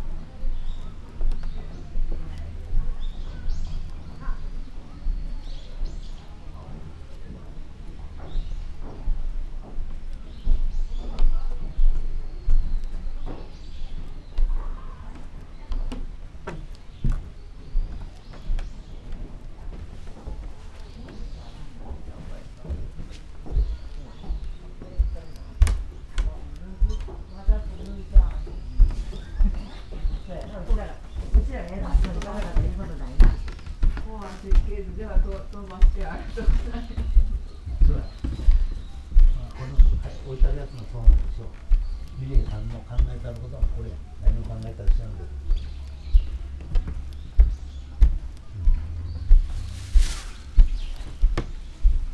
wooden floor, Tofukuji gardens, Kyoto - walking
gardens sonority, from veranda, steps
Kyōto-fu, Japan